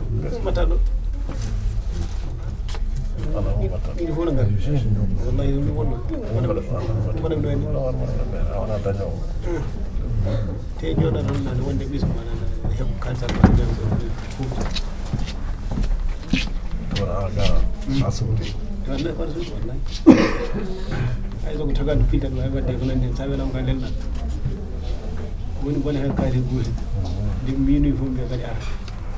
People waiting to be registered for unemployment.
PCM-M10 internal microphones.